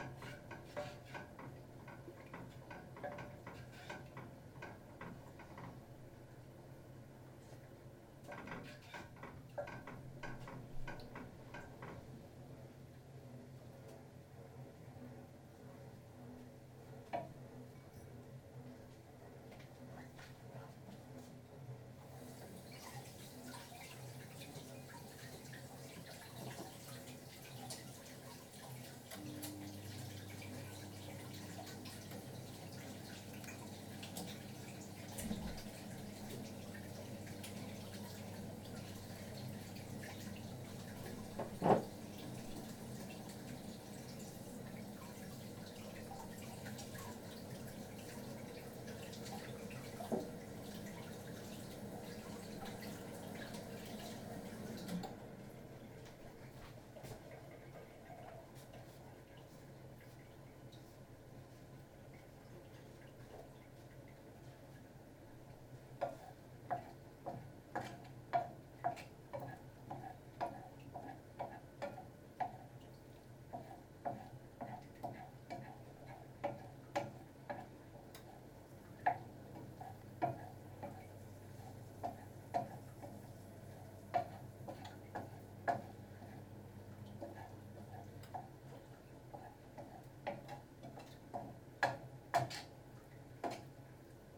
My kitchen, Reading, UK - Making dinner - Turkey Curry
I was thinking all day yesterday about everyday sounds, and had been too much on my computer all day long. To distance myself from the screen, I decided to take pleasure in making the dinner (as I often do). Standing in the kitchen I wondered how many countless times have I listened to this combination of Mark and his children chatting in the other rooms, the noise of the dishwasher, and all of the little culinary noises which result from preparing our food. This is the soundscape of my home. There is no sound I like better, the moment my key is in the door and I hear the familiar warm, woody acoustics of this place, I feel safe and happy and loved. This the soundtrack of a totally normal, completely uneventful Monday night making a curry. It is the most mundane and precious collection of little sounds I can imagine - the sound of the compost bin as I clack it open with my foot, the lovely round dings the saucepans that we bought a few years ago make when I stir in them.
7 April 2014, 18:23